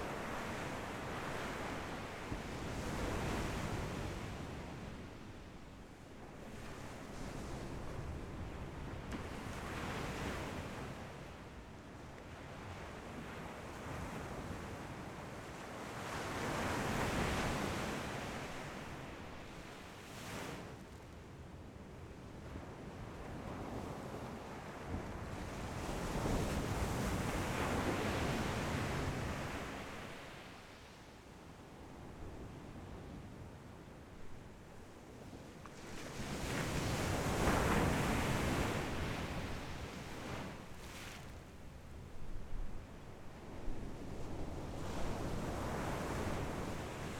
{
  "title": "午沙港, Beigan Township - Sound of the waves",
  "date": "2014-10-13 12:00:00",
  "description": "Sound of the waves, Very hot weather, Small port\nZoom H6 XY",
  "latitude": "26.22",
  "longitude": "119.99",
  "altitude": "138",
  "timezone": "Asia/Taipei"
}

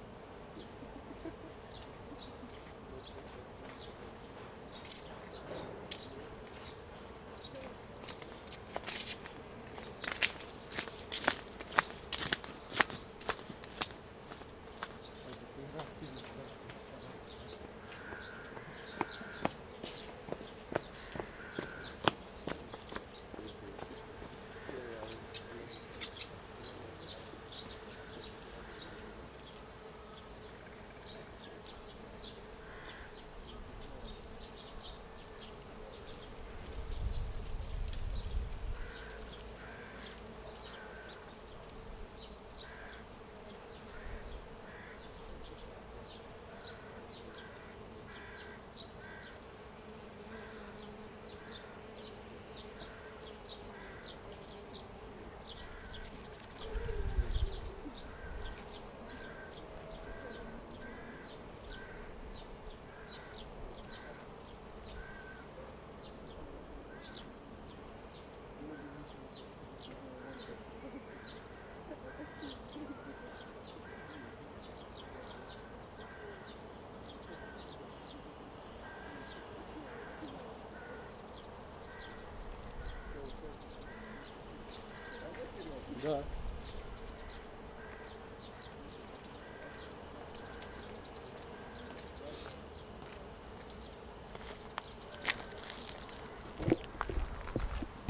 {
  "title": "узвіз Бузький, Вінниця, Вінницька область, Україна - Alley12,7sound12childrenandbees",
  "date": "2020-06-27 12:32:00",
  "description": "Ukraine / Vinnytsia / project Alley 12,7 / sound #12 / children and bees",
  "latitude": "49.23",
  "longitude": "28.47",
  "altitude": "242",
  "timezone": "Europe/Kiev"
}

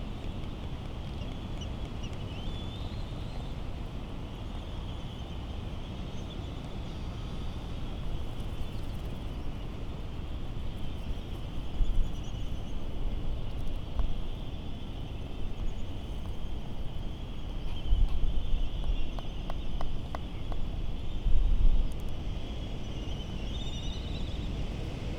{
  "title": "United States Minor Outlying Islands - Laysan albatross soundscape ...",
  "date": "2012-03-19 16:40:00",
  "description": "Laysan albatross soundscape ... Sand Island ... Midway Atoll ... recorded in the lee of the Battle of Midway National Monument ... open lavalier mics either side of a furry table tennis bat used as a baffle ... laysan calls and bill rattling ... very ... very windy ... some windblast and island traffic noise ... bit of a lull in proceedings ...",
  "latitude": "28.21",
  "longitude": "-177.38",
  "altitude": "10",
  "timezone": "Pacific/Midway"
}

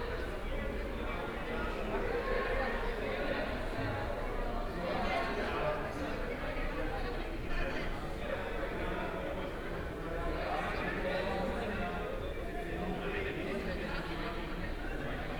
{
  "title": "Köln, Maastrichter Str., backyard balcony - party crowd in the yard",
  "date": "2015-06-03 23:50:00",
  "description": "sounds of a party crowd celebrating a birthday, heard on the backyard balcony. Interesting reflections and echos from voices and other sounds.\n(Sony PCM D50, OKM2)",
  "latitude": "50.94",
  "longitude": "6.93",
  "altitude": "57",
  "timezone": "Europe/Berlin"
}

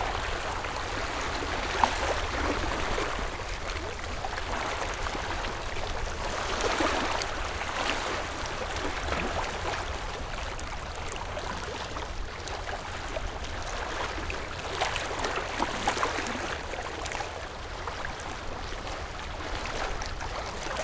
{
  "title": "講美村, Baisha Township - Wave and tidal",
  "date": "2014-10-22 09:31:00",
  "description": "Wave and tidal, At the beach\nZoom H6 + Rode NT4",
  "latitude": "23.63",
  "longitude": "119.60",
  "altitude": "6",
  "timezone": "Asia/Taipei"
}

